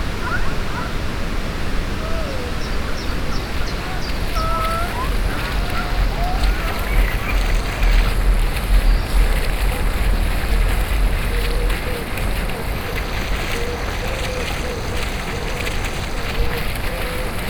Seneffe, the Castle - Le Chateau de Seneffe.
A lot of wind, an exhibition in the park.